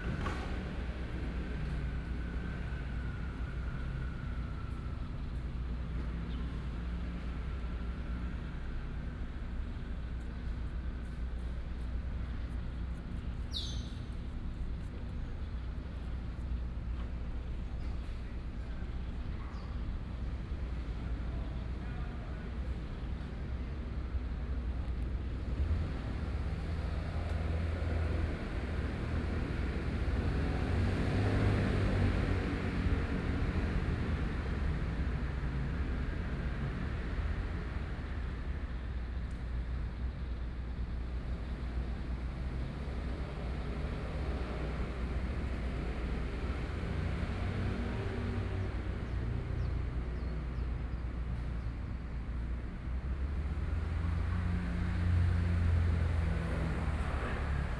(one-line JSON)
{"title": "Minrong Park, Taipei City - Quiet little park", "date": "2014-04-27 13:16:00", "description": "Quiet little park\nSony PCM D50+ Soundman OKM II", "latitude": "25.04", "longitude": "121.54", "altitude": "14", "timezone": "Asia/Taipei"}